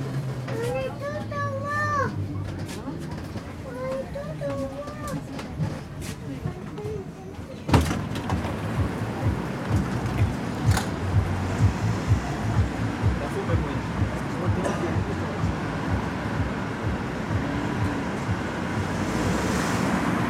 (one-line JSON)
{"title": "Rue de la Régence, Bruxelles, Belgique - Tram 92 between Faider and Royale", "date": "2022-05-25 13:42:00", "description": "Modern Tram.\nTech Note : Olympus LS5 internal microphones.", "latitude": "50.84", "longitude": "4.35", "altitude": "65", "timezone": "Europe/Brussels"}